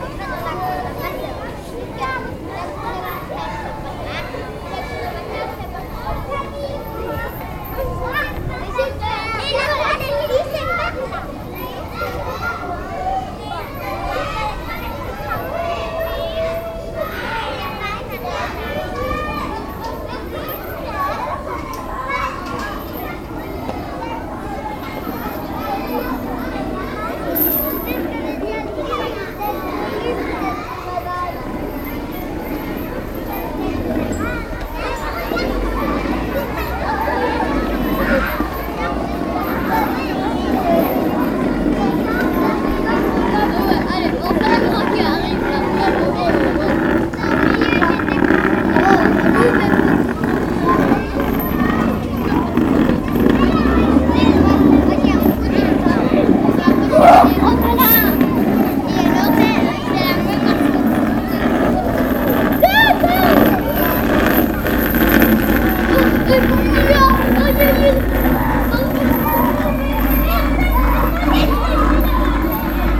Court-St.-Étienne, Belgique - Wisterzée school
Children playing in the Wisterzée school.